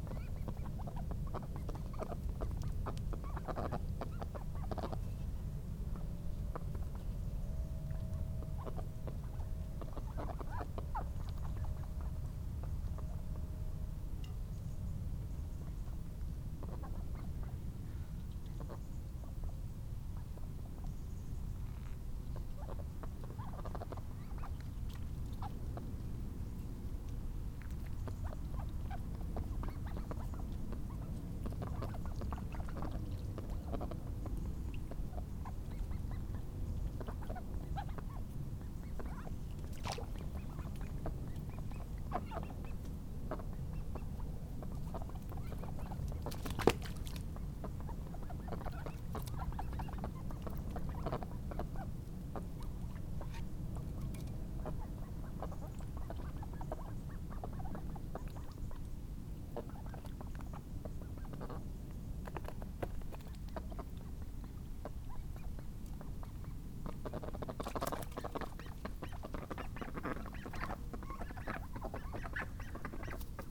The new duck pond, Reading, UK - The morning duck ritual
We recently got three lovely Khaki Campbell ducks - my favourite breed - and installed them in an enclosure with a pond and the duck house that my family bought me for my birthday. Now each morning starts with the nice ritual of opening up the duck house, cleaning their food bowl and replacing the food in it, cleaning their water dish and tidying up the straw in their duck house. While I do these simple care things for the ducks they flap and quack and make a noise, as they do not really enjoy human interference in their duck lives. I love the duck buddies already, and especially the wonderful sounds they bring into our lives. Hopefully one day soon there will also be some eggs...